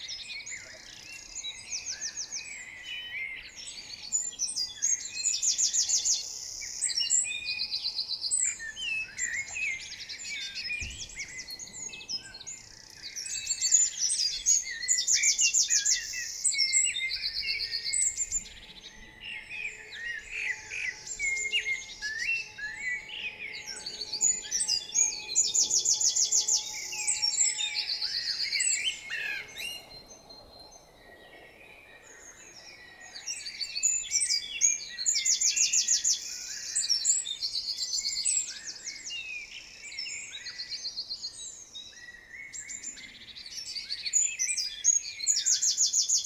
Mont-Saint-Guibert, Belgique - Birds on the early morning
Birds singing on the early morning. Spring is a lovely period for birds.
Common chaffinch, blackbird, greenfinch, european robin, and great tit.